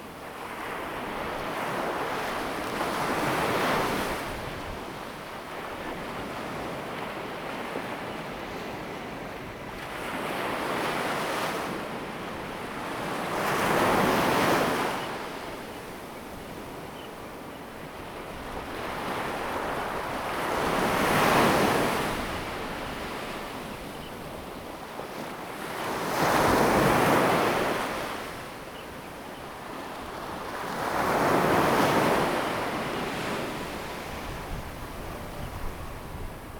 At the beach, Sound of the waves, Birds sound, traffic sound, Not far from temples
Zoom H2n MS+XY